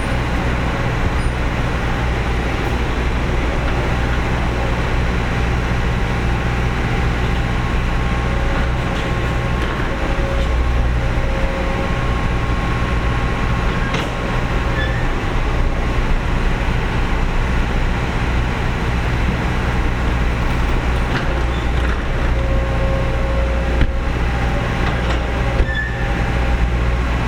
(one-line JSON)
{"title": "berlin: friedelstraße - the city, the country & me: sewer works", "date": "2013-08-20 16:29:00", "description": "two excavators in action\nthe city, the country & me: august 20, 2013", "latitude": "52.49", "longitude": "13.43", "altitude": "46", "timezone": "Europe/Berlin"}